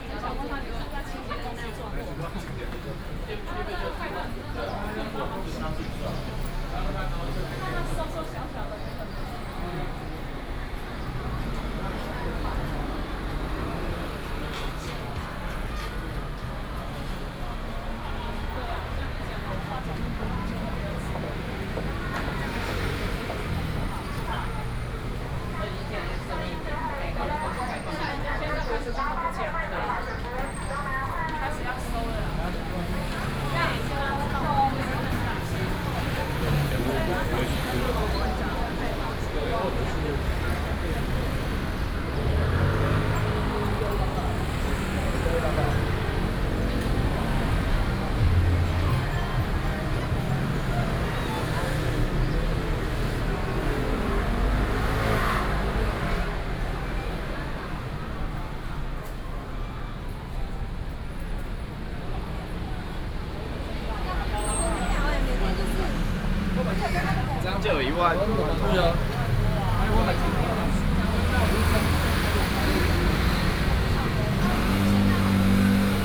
通化街, Da'an District - walking in the Street
walking in the Street, Traffic noise, Various shops
Da’an District, Taipei City, Taiwan, June 2015